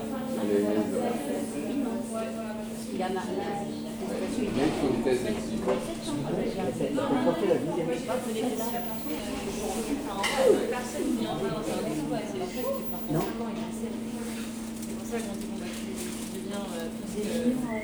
Rambouillet, France - Rambouillet station
The main waiting room of the Rambouillet station. People talking while they wait their train, and some annoucements about a platform change.